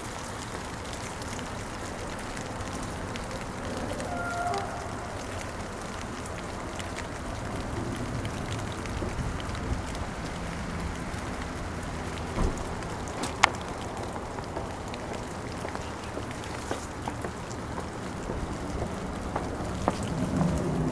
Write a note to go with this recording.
moderate rain in front of the hotel entrance. drops on the bushes and taxis stopping.